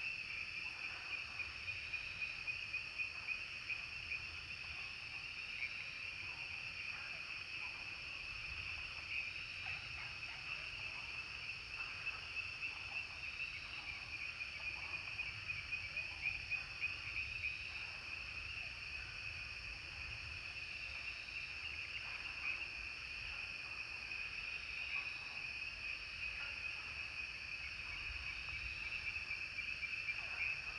蓮華池藥用植物標本園, 魚池鄉五城村 - Frog sounds
Frog sounds, Ecological pool
Zoom H2n MS+XY
Yuchi Township, 華龍巷43號, 3 May 2016